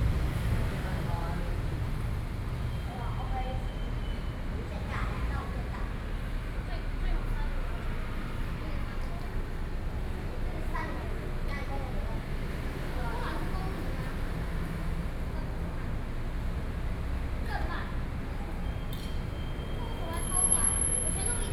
Beitou, Taipei - Below the track
Commuting time, Sony PCM D50 + Soundman OKM II